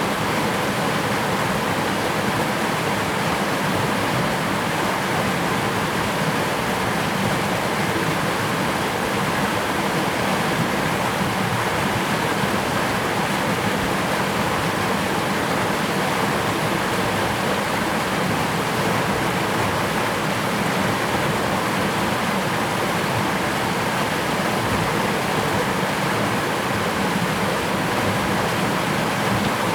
Yuli Township, Hualien County - Irrigation waterway
Irrigation waterway, The sound of water